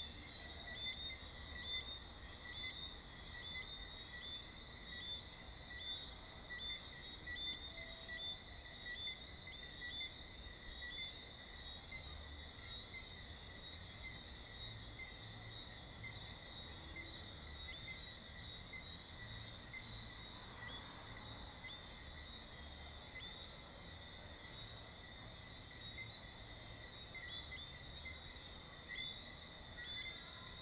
The sounds of Saturday night at Herdmanston Lodge on a quiet(ish) street in Georgetown, Guyana. You can hear an ensemble of crickets mixing with bassy sound systems, distant car horns, and the general buzz of distant activity.
Demerara-Mahaica Region, Guyana